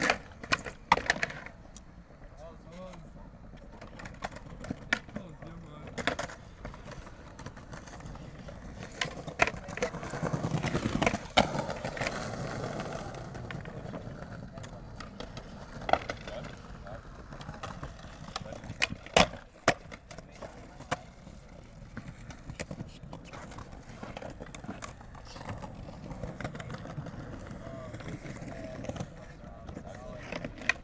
Tempelhof, Berlin, Deutschland - skater area
Skater's area on the former Tempelhof airport
(Sony PCM D50, DPA4060)